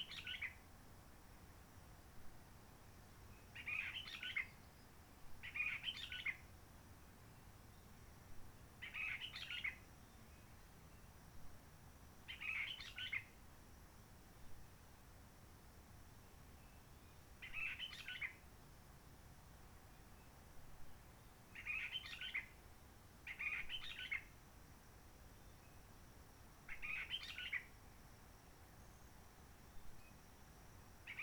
Merle de la Réunion.
Les piafs de l'île de la Réunion ont eu un heureux événement, d'un coup les humains se sont arrêté d'envahir la forêt avec des marmailles hurlants, on arrêté de se promener en ULM et en hélico, depuis le 19 mars 2020 c'est calme même quand il fait beau, et depuis des années on n'avait pas pu faire l'expérience du beau temps, ciel bleu + soleil en même temps que les chants d'oiseaux. Mais les oiseaux ne sont pas si actifs que cela, ils n'ont pas encore repris l'habitude d'exploiter cette partie de la journée pour leur communications longue distance.
La Réunion, France, 28 March 2020